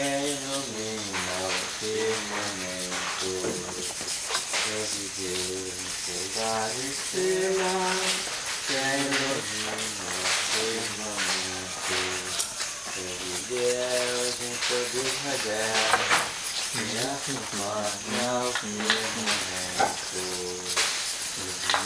Mapia- Amazonas, Brazilië - Mapia-Santo Daime-scraping of Banisteriopsis caapi
Mapia- church of Santo Daime- preperations to make Ayahuasca drink. This is the sounds of the scraping of Banisteriopsis caapi, the DMT holding ingredient. The songs are called hinario's and are received from the plant spirits.
Região Norte, Brasil, 7 July 1996, 09:17